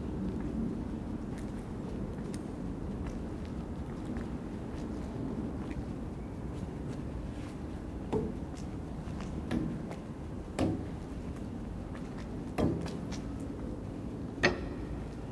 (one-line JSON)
{"title": "Ritterstraße, Berlin, Germany - Lockdown Aquahof, empty except for dripping pipes", "date": "2020-11-01 16:23:00", "description": "Quiet in the small old-style Hinterhof Aquahof. Everything is closed. It has recently rained and the drain pipes are still dripping. Sound sometimes filters in from outside. I am the only one there. My footsteps are barely audible.", "latitude": "52.50", "longitude": "13.41", "altitude": "36", "timezone": "Europe/Berlin"}